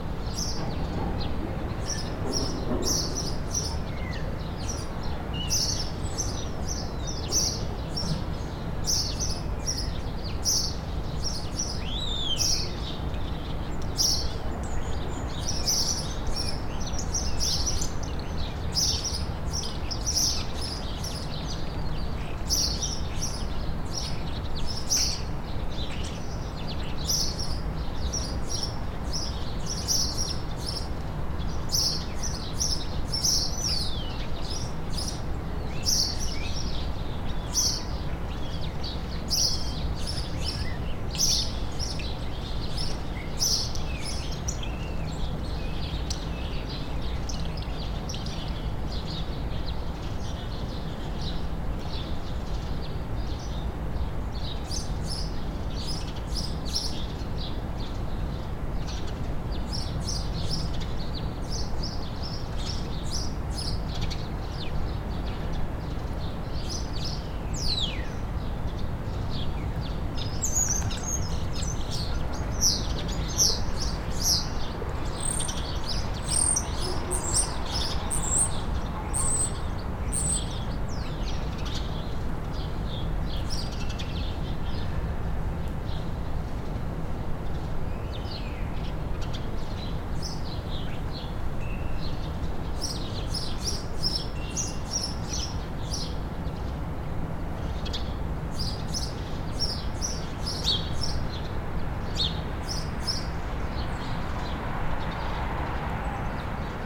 Rue Jean Fleuret, Bordeaux, France - The brutalist showpiece 02
Mériadeck is the “post-apocalyptic” concrete district of Bordeaux.
It was built in the 1960’s, wiping out a former working-class neighborhood that had become unhealthy.
It is part of the major urban renewal programs carried out after the Second World War in France that embraced the concept of urban planning on raised concrete slabs from the 1950s
Nouvelle-Aquitaine, France métropolitaine, France, 12 February 2022